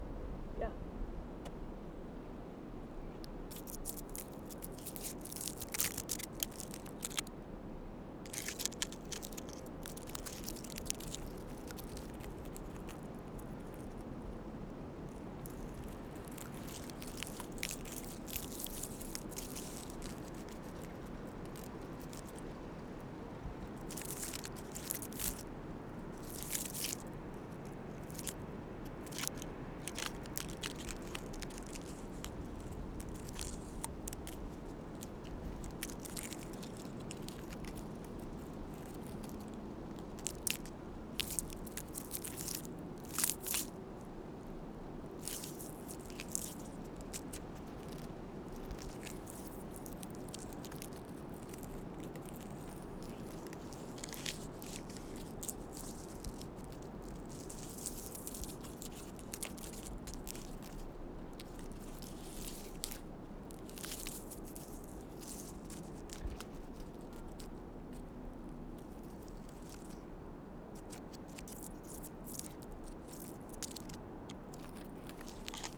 Danby Road Ithaca, NY, USA - Scraping bark off a tree
I went for a walk in the Ithaca College Natural Lands and recorded myself scraping bark off of a tree. Recorded on a mix pre-6 with a shotgun microphone. Very windy day with almost a foot of snow on the ground for some parts of the walk.